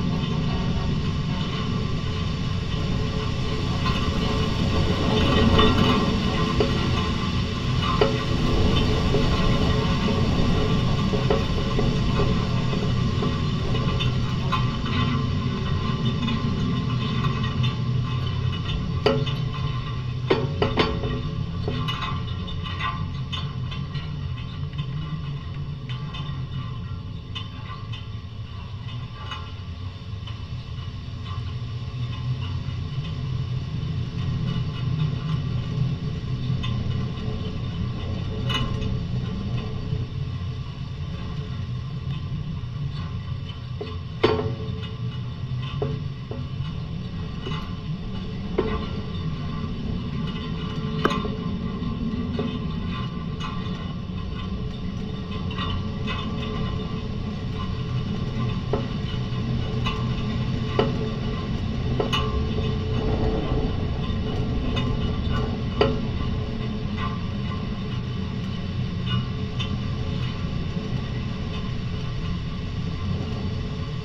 Šlavantai, Lithuania - Electricity pole resonance
Dual contact microphone recording of a electricity pole. Wind and clanging of electrical wires can be heard resonating through the pole.
28 June, 10:00